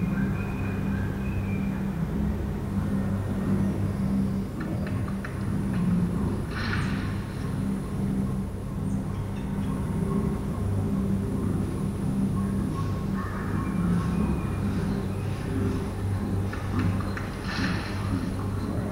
erkrath, neandertal, museum - mettmann, neandertal, museum
soundmap: mettmann/ nrw
indoor soundinstallation, exponat bescgallung, ambiente im naturhistorischen museum neandertal
project: social ambiences/ listen to the people - in & outdoor nearfield recordings